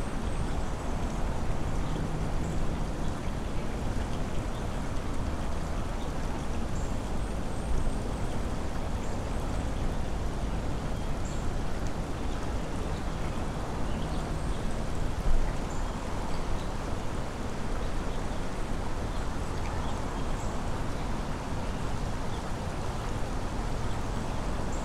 Captured from a narrow footbridge over the Tanyard Creek which connects the Northside Beltline trail to the Atlanta Peace Park. Some people pass by, and you can hear the urban creek slowly trickling. Noise from Collier Road spills into the adjacent greenspace. The mics were taped to the metal railing on the left side. A low cut was administered in post.
[Tascam Dr-100mkiii & Primo Clippy EM-272]